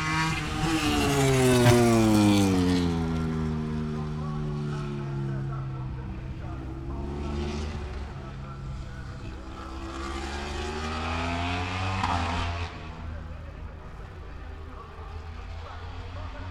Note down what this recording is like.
moto grand prix qualifying two ... Vale ... Silverstone ... open lavaliers clipped to clothes pegs fastened to sandwich box ... umbrella keeping the rain off ... very wet ... associated noise ... rain on umbrella ... helicopters in the air ...